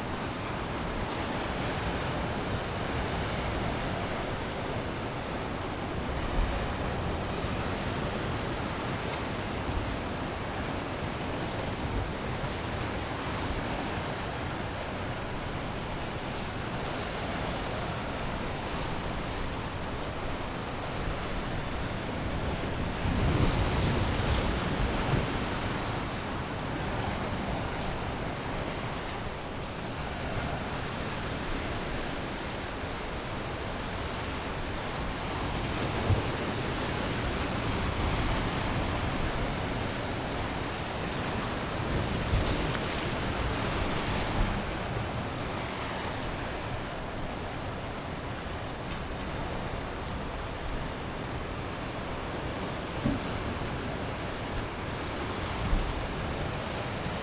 Henrietta St, Whitby, UK - incoming tide ...
incoming tide ... lavalier mics on T bar on 3m fishing landing net pole over granite breakwater rocks ...
England, United Kingdom